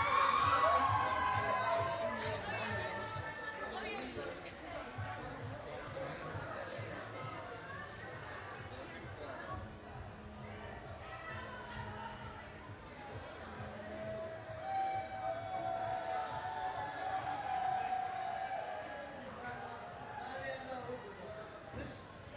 Kortenbos, Centrum, Nederland - Soccer party downtown The Hague
A small impression of people celebrating in downtown The Hague because the Dutch defeated the Brazilians (WC2010) on July 2nd 2010.
Zoom H2 recorder with SP-TFB-2 binaural microphones.